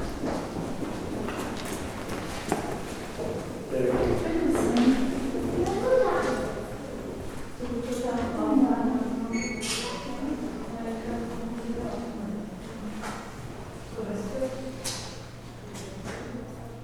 short walk in Rundale park museum
Latvia, August 2012